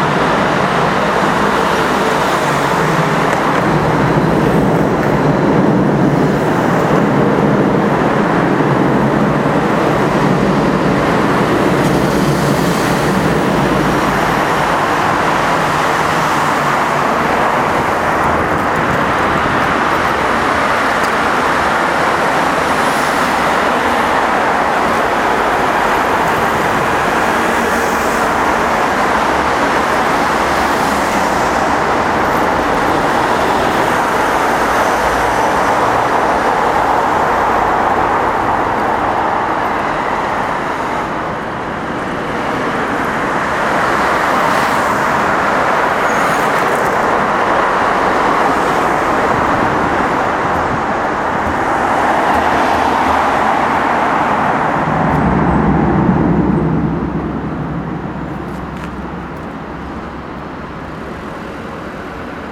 traffic in Milano, Italy - very heavy traffic under the railway bridge - acking ears
extremely heavy traffic in the morning rush hour in one of the principal ways of access to the city. A small sidewalk passes under the railway bridge. When traffic lights are green, cars echo in the tunnel and train passes over, decibels rise to unberable levels. Listen to this noxious recording.
7 November, 09:35